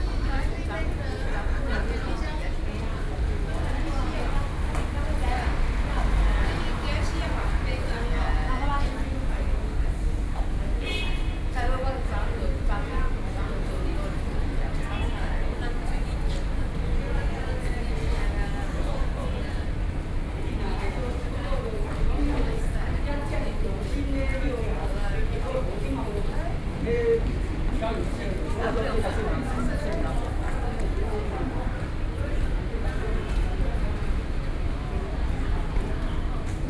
NTU Hospital Station, Taipei City - At the metro stations
At the metro stations., People waiting and traveling walking, (Sound and Taiwan -Taiwan SoundMap project/SoundMap20121129-10), Binaural recordings, Sony PCM D50 + Soundman OKM II
29 November 2012, 13:04